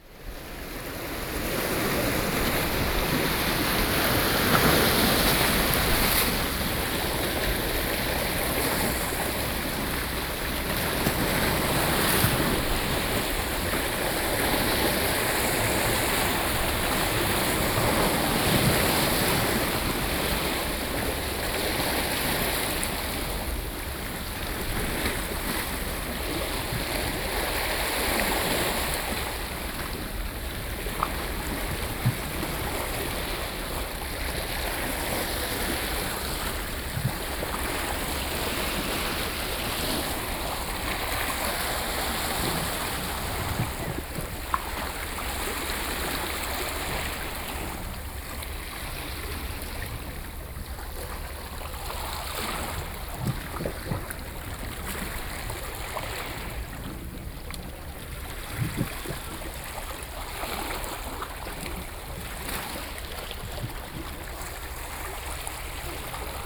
tuman, Keelung - Waves
Ocean waves crashing sound, Sony PCM D50